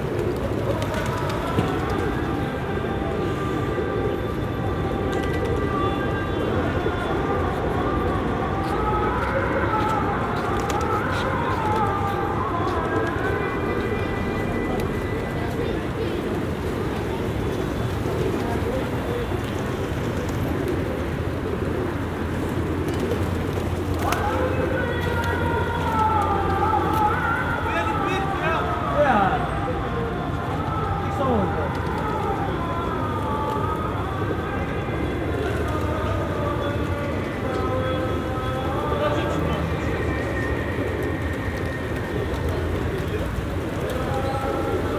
Pigeons and Adhan near Mosque
pigeons and Adhan in front of a Mosque in Istanbul
10 February 2010, 15:00